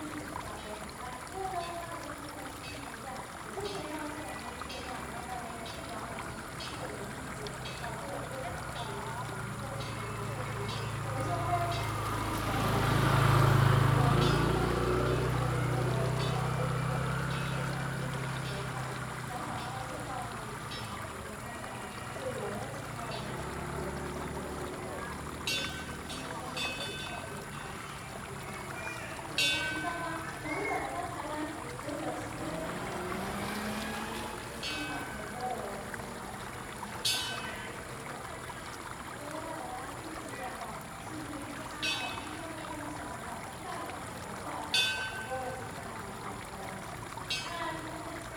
Paper Dome, 桃米里 Nantou County - sound of streams
sound of water streams, Bell hit, A small village in the evening
Zoom H2n MS+XY
Puli Township, 桃米巷52-12號